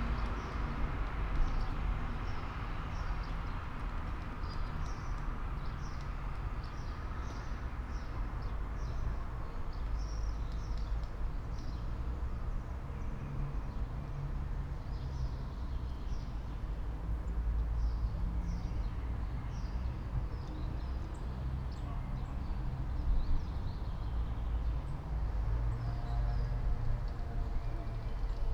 all the mornings of the ... - may 12 2013 sun